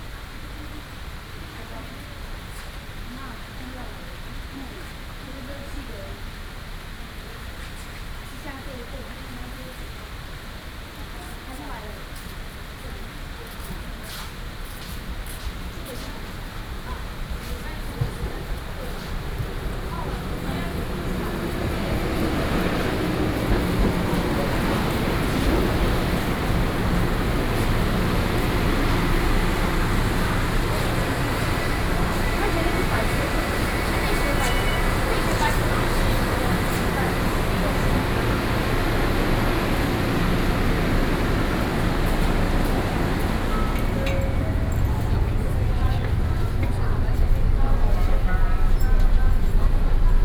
Towards railway platforms, Sony PCM D50 + Soundman OKM II
新北市 (New Taipei City), 中華民國, 20 June 2012